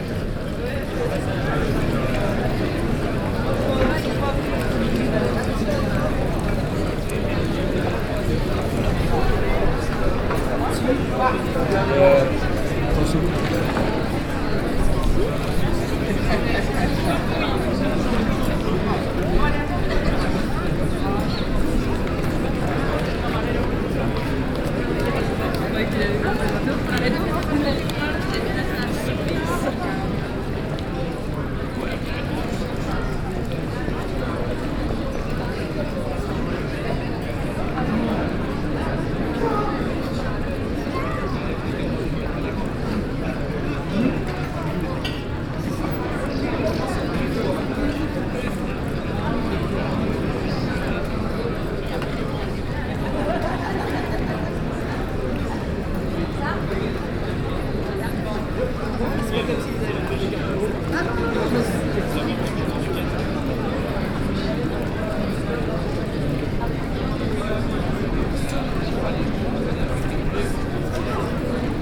Louvain La Neuve, Place Rabelais
At night, bars and restaurants, laid back atmosphere.
Ottignies-Louvain-la-Neuve, Belgium, 25 May 2011